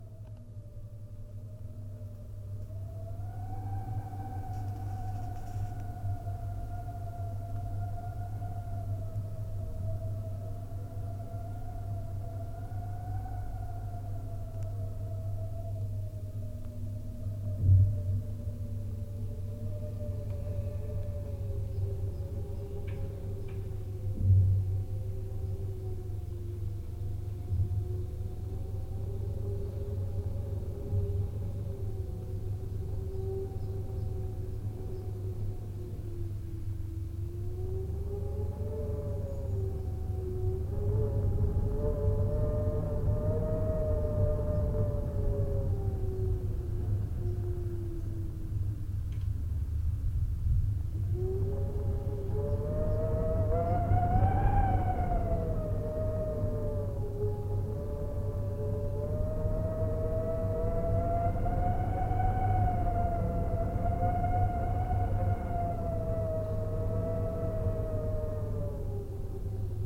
Hotel International, Zagreb
draught in the corridor 9thfloor and the sound from the open window